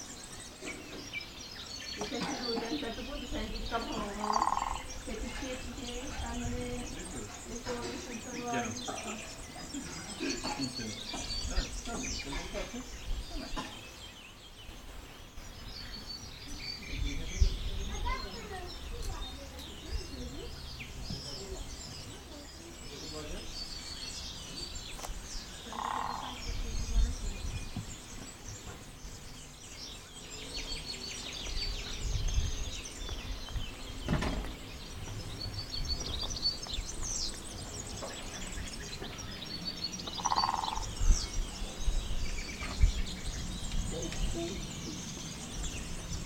Via S. Francesco, Serra De Conti AN, Italia - Family with woodpeckers
Sony dr 100
Serra De Conti AN, Italy, 26 May